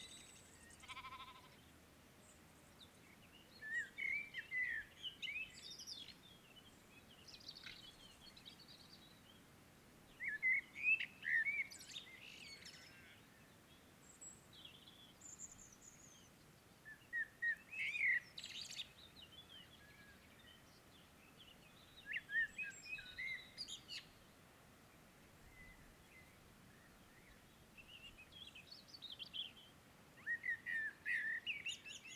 The Long Man of Wilmington, South Downs, UK - The Long Man of Wilmington Dawn Chorus